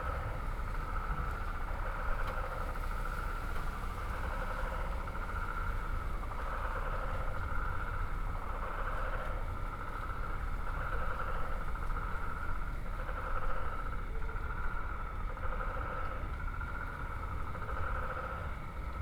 listening out into the urban hum around the backpackers...
Lusaka Province, Zambia, 13 June 2018